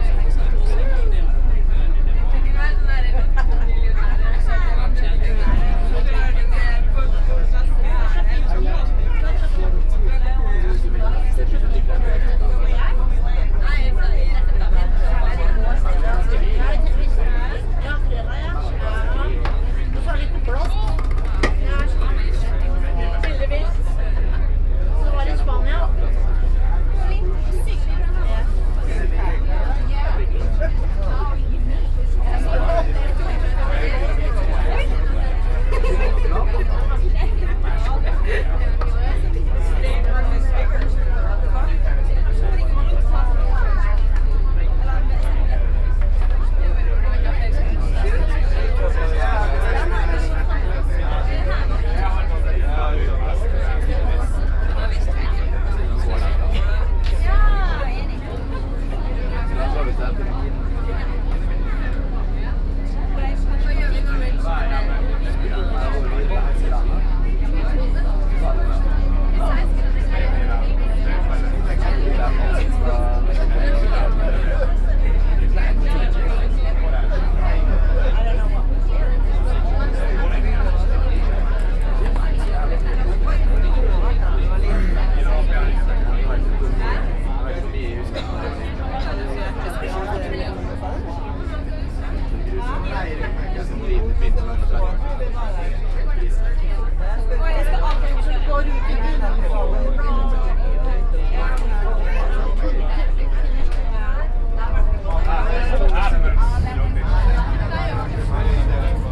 Oslo, Boat 91, Aker brygge to Dronningen
Norway, Oslo, boat, sea, binaural